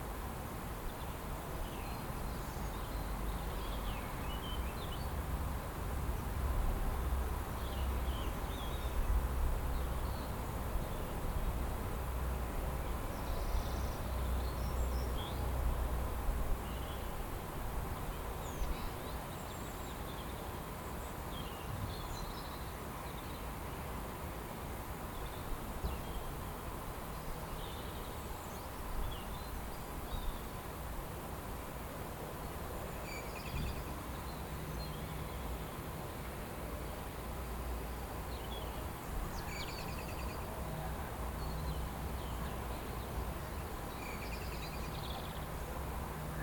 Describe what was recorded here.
ENG : Ambience of a garden in a very quiet neighborhood. A cat is asking to enter a house, many birds and some trash bin being moved in the street. Recorder : TASCAM DR07 with internal mics. FR: Ambiance d'un jardin dans un quartier très calme. Un chat demande entrer à la maison, plusieurs oiseaux et une poubelle est transportée par la rue.